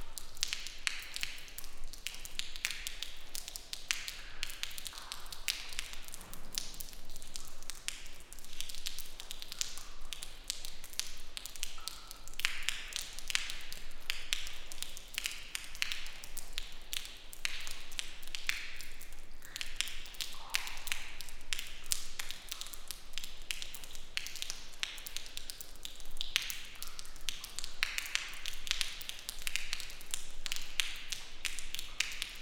Baggböle kraftverk, Umeå. Raindrops from leaking - Baggböle kraftverk, Umeå. Raindrops from leaking roof#2
Baggböle kraftverk
Recorded inside the abandoned turbine sump whilst raining outside. Drips from leaking roof.
Sweden, 6 May 2011, ~16:00